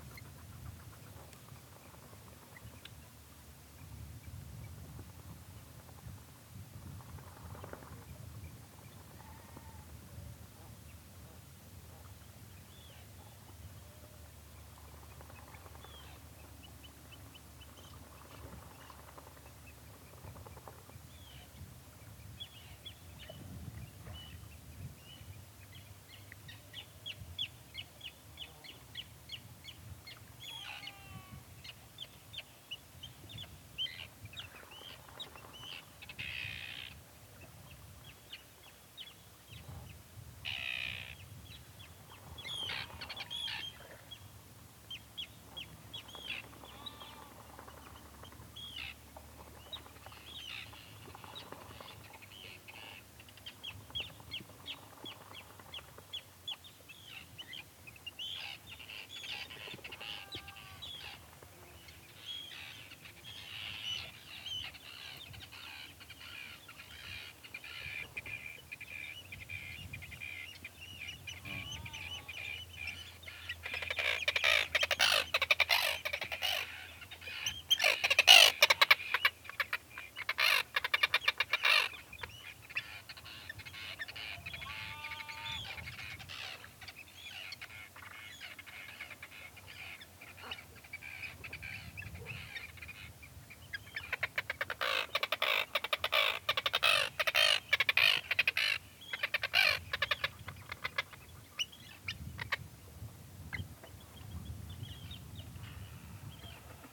Sat on the rocks outside Nesbister Böd, Whiteness, Shetland Islands, UK - Listening to terns, wind, sheep and otters outside the camping böd
The böd at Nesbister is in a truly beautiful situation, a fifteen minute walk from where you can dump a car, perched at the edge of the water, at the end of a small, rocky peninsula. There is a chemical toilet and a cold tap there, and it's an old fishing hut. People who have stayed there in the past have adorned the ledge of the small window with great beach finds; bones, shells, pretty stones, pieces of glass worn smooth by the sea, and driftwood. There is a small stove which you can burn peat in, and I set the fire up, ate a simple dinner of cheese and rice cakes, then ventured out onto the rocks to listen to everything around me. Terns are the loudest thing in the evening by Nesbister, but the sheep who graze all around the peninsula were doing their evening time greetings, and the otters who live on a tiny island quite near to the böd were shyly going for their swims.